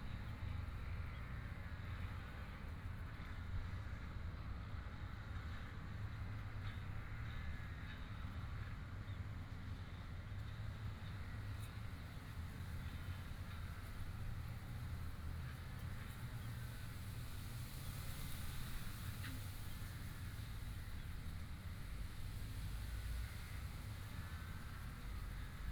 Taiping River, Taitung City - The river
The other side of the river there mower noise, The sound of the wind moving the leaves, Zoom H6 M/S
Taitung County, Taiwan, January 2014